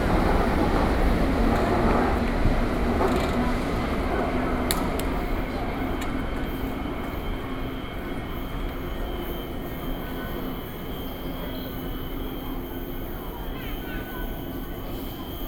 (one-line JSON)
{"title": "Taipei, Taiwan - waiting for the train", "date": "2012-10-27 17:35:00", "latitude": "25.03", "longitude": "121.52", "altitude": "24", "timezone": "Asia/Taipei"}